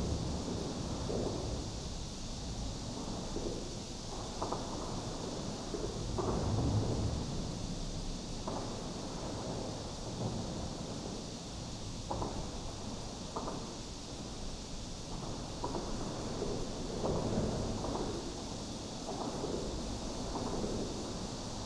Ln., Sec., Minquan Rd., Zhongli Dist. - Under the highway
Under the highway, traffic sound, Cicada cry
Zoom H6 XY